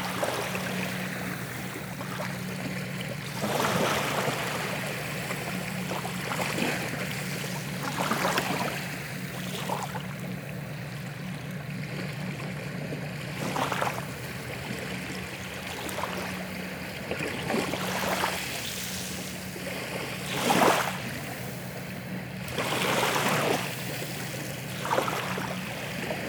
Smooth sound of the sea on the Zwartepolder beach.